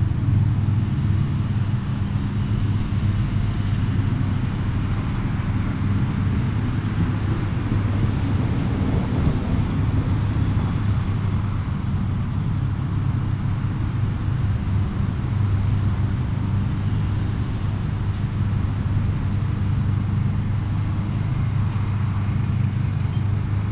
Mallory Ave, Milwaukee, WI - Summer day on porch in Milwaukee
On our front porch on a summer day. Rode M3 pair -> Behringer Xenix 802 -> Olympus LS-10.